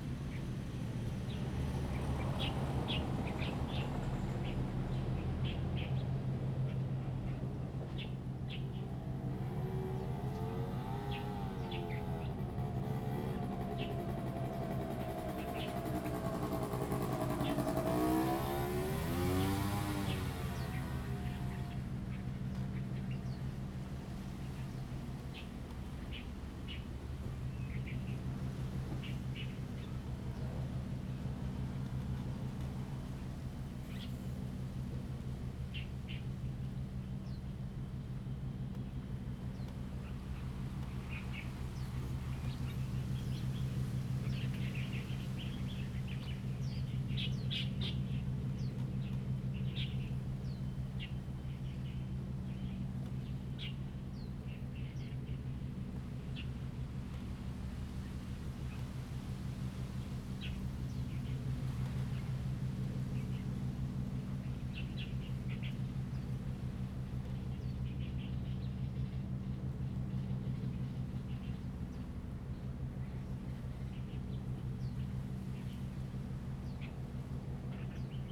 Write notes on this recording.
Birds singing, The sound of a distant fishing boat, Wind and Trees, Zoom H2n MS+XY